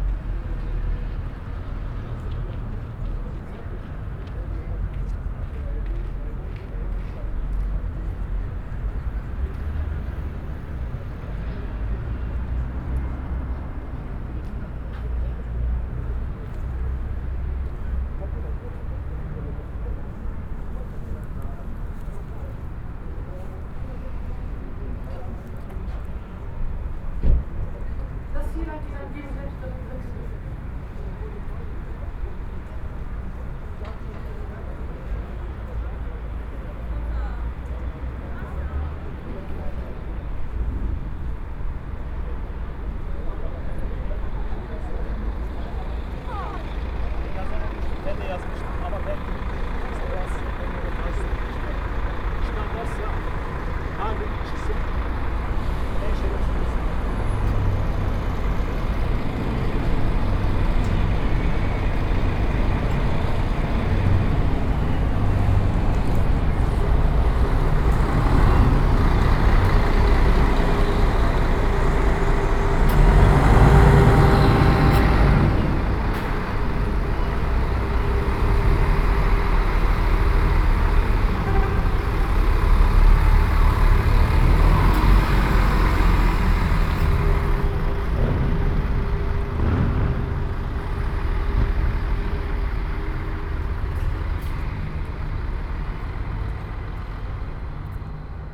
lingering in front of the city library entrance, locked doors, usually it would be busy in and out here this time...
Nordrhein-Westfalen, Deutschland, 1 April 2020